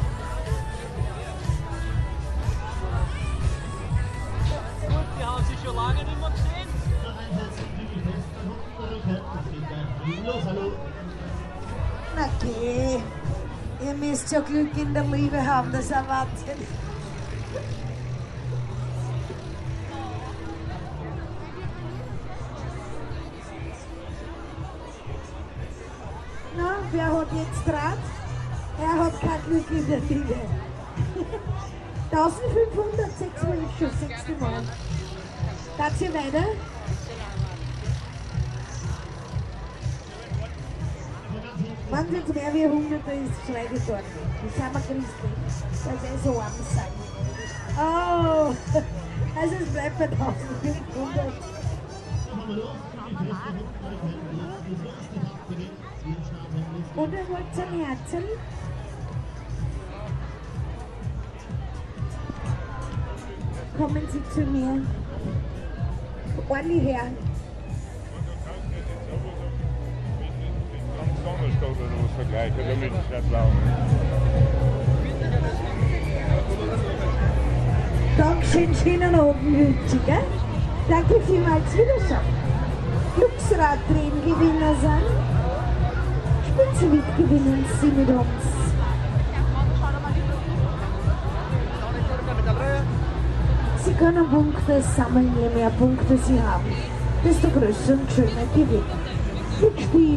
wien-stadlau, wheel of fortune
wheel of fortune at the stadlauer kirtag annual fair 2010